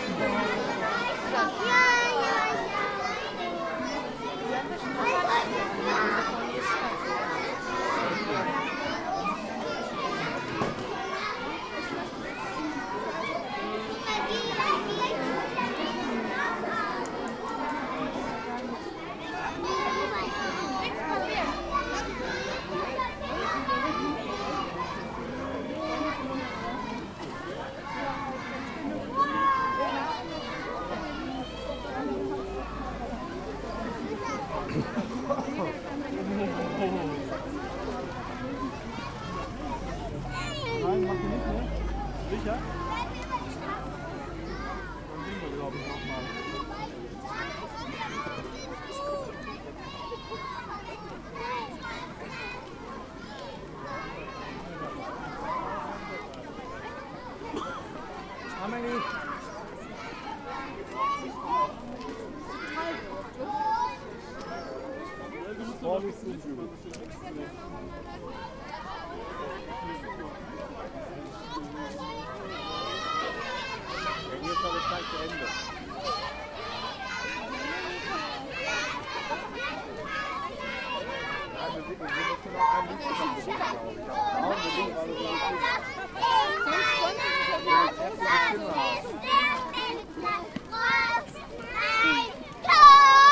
Bad Orb, Hauptstrasse, St. Martins-Umzug - Laternelaufen 2018
A kindergarden in Bad Orb walks with the children, the parents and the organisers through Bad Orb with lanterns, singing traditional songs about St. Martin, a common practice in Germany, in catholic towns like Orb they sing songs about the saint st. martin. Recorded with the H2 by Zoom.